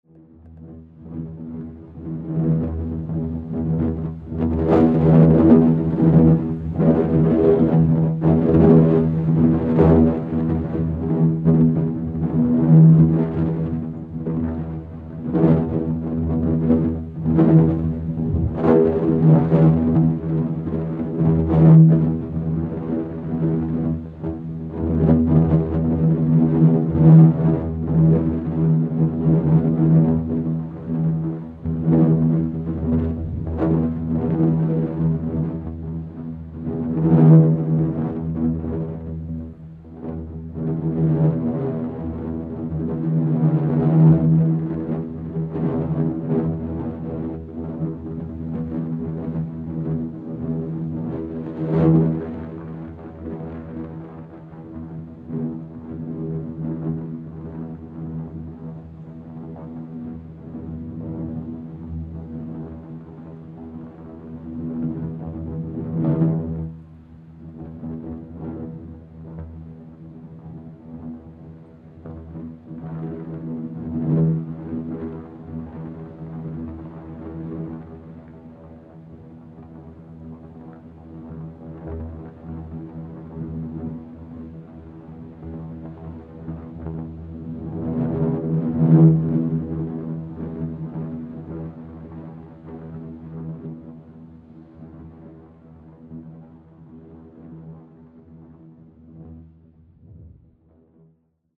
Digulleville, France - Wind inside a mast
Wind recorded inside a tube (windsurf mast), Zoom H6
10 December 2015, 11:20